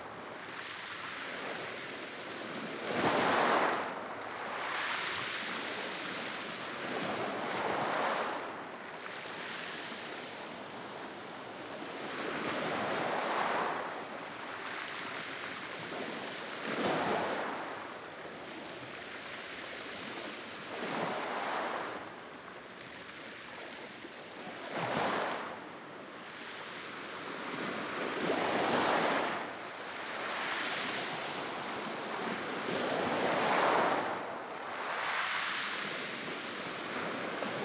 Altea - Province d'Alicante - Espagne
Plage de Cap Negret
Ambiance 2 - vagues sur les galets
ZOOM F3 + AKG 451B
Comunitat Valenciana, España